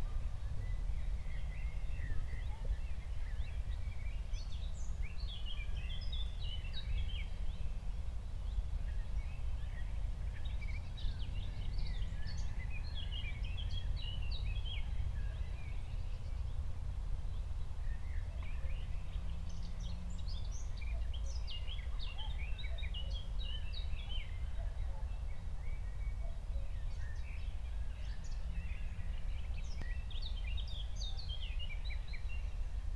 Berlin, Buch, Mittelbruch / Torfstich - wetland, nature reserve
17:00 Berlin, Buch, Mittelbruch / Torfstich 1
Deutschland